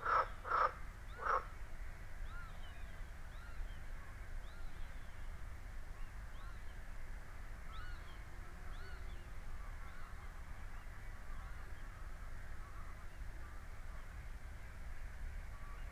birds of the nearby bird sanctuary, frogs, insects, me
the city, the county & me: june 12, 2014
Workum, The Netherlands, 12 June